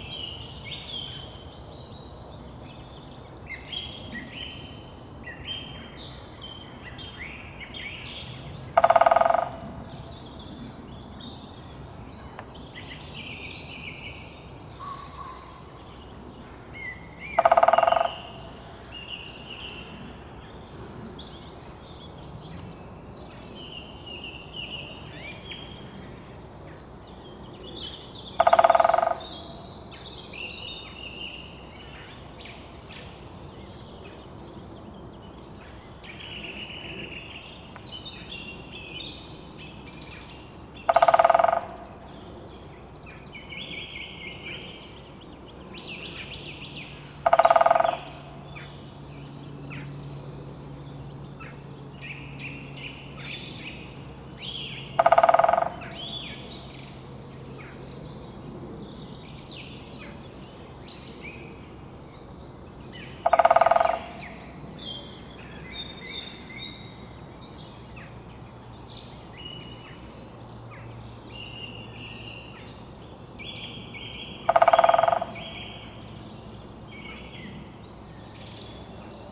{"title": "Warburg Nature Reserve - Greater Spotted Woodpecker drumming with a Jetplane", "date": "2017-02-16 08:10:00", "description": "Just as I arrived in the car park at the reserve on a lovely clear but cold morning with mist in the vally, the woodpecker started his territorial pecking on an old dead oak branch 30feet above me.Sony M10 with Rode Videomic ProX.", "latitude": "51.59", "longitude": "-0.96", "altitude": "107", "timezone": "GMT+1"}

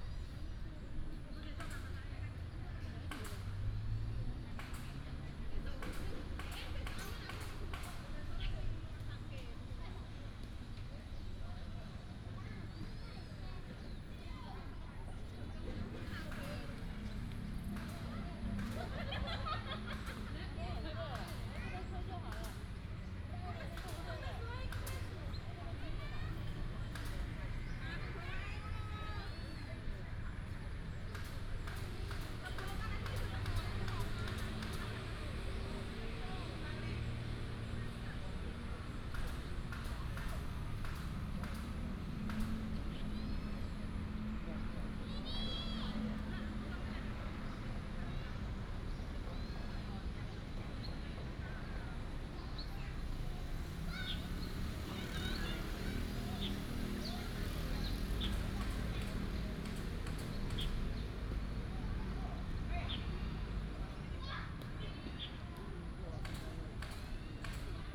{"title": "雲南文化公園, Zhongli Dist., Taoyuan City - in the Park", "date": "2017-07-10 16:28:00", "description": "birds sound, In the Park, Traffic sound", "latitude": "24.93", "longitude": "121.25", "altitude": "172", "timezone": "Asia/Taipei"}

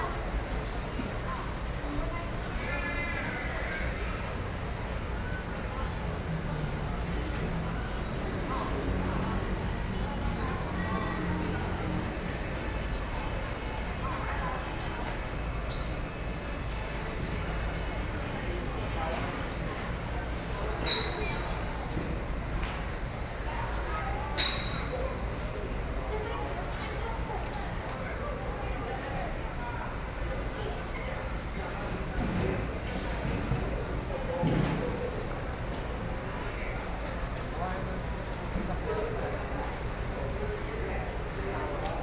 {"title": "Yilan Station, Yilan City - In the station lobby", "date": "2014-07-05 11:01:00", "description": "In the station lobby, Voice message broadcasting station, A lot of tourists\nSony PCM D50+ Soundman OKM II", "latitude": "24.75", "longitude": "121.76", "altitude": "12", "timezone": "Asia/Taipei"}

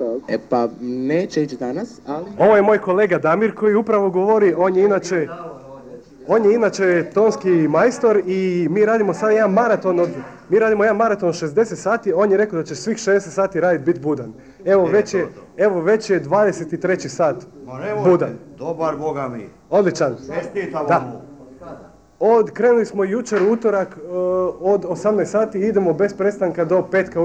{"title": "Rijeka, Croatia, Archive - Radio 051 Interview, Brijačnica", "date": "1994-02-02 12:15:00", "description": "Radio 051 interviews in the streets of Rijeka in 1994.\nInterviews was recorded and conducted by Goggy Walker, cassette tape was digitising by Robert Merlak. Editing and location input by Damir Kustić.", "latitude": "45.33", "longitude": "14.44", "altitude": "15", "timezone": "GMT+1"}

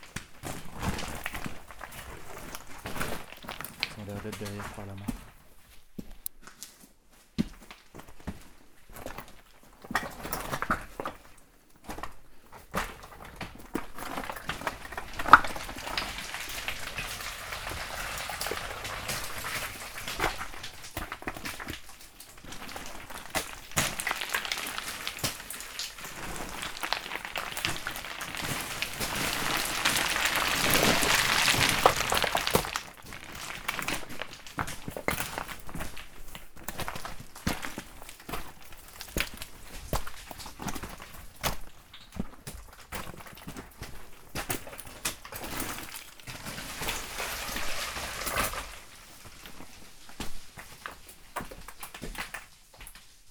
{"title": "Saint-Martin Le Vinoux, France - Cement mine", "date": "2017-03-26 10:15:00", "description": "We are exploring an underground cement mine. Especially, we are trying to reach an upper level, using a dangerous chimney. Small cements rocks are falling from everywhere.", "latitude": "45.20", "longitude": "5.72", "altitude": "311", "timezone": "Europe/Paris"}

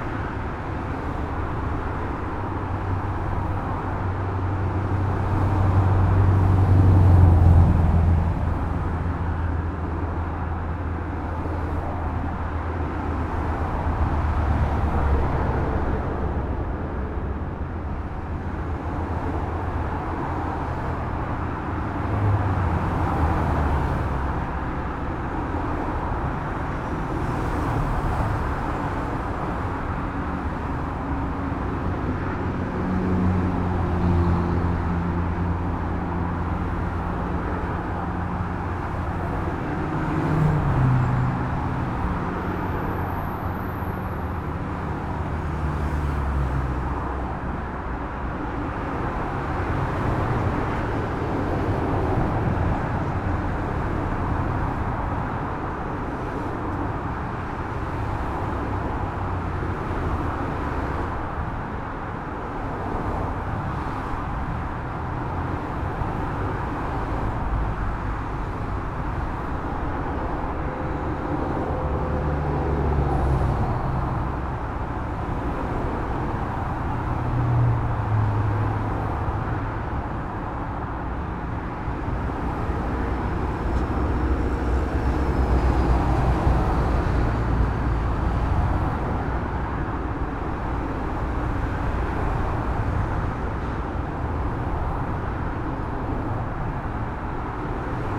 {"title": "Motorway Bridge, Athens, Greece - Pedestrian Bridge over Motorway", "date": "2019-06-19 19:00:00", "description": "Standing in the centre of the motorway pedestrian bridge, inbetween the two directions of travel directly below, at evening rush hour. Heavy traffic in one direction (out of Athens) and lighter traffic in the other (towards Athens). DPA4060 to Tascam HDP-1.", "latitude": "38.09", "longitude": "23.79", "altitude": "236", "timezone": "Europe/Athens"}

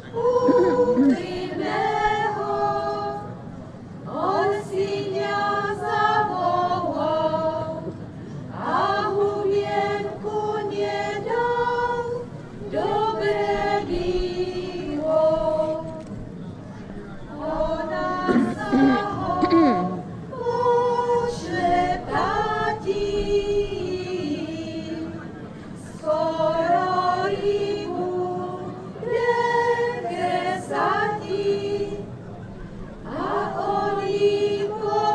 {"title": "Kuželov, wind mill, hornacke slavnosti", "date": "2011-07-24 19:56:00", "description": "traditional folklor feast at Kuzelov, happening every july. local people from several villages around singing and dancing.", "latitude": "48.85", "longitude": "17.50", "altitude": "384", "timezone": "Europe/Prague"}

